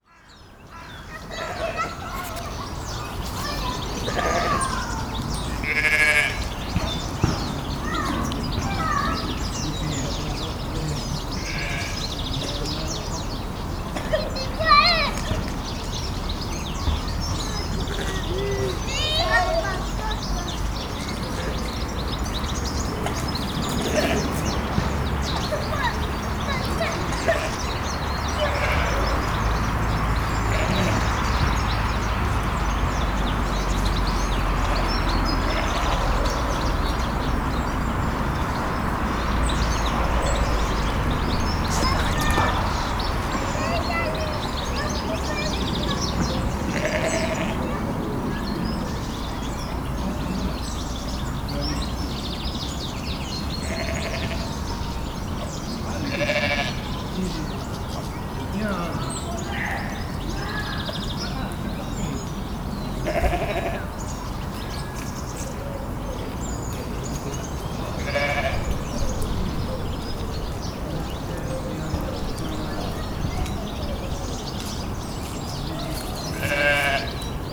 In a forest, birds singing, sheep bleat and kids have fun.
Ottignies-Louvain-la-Neuve, Belgium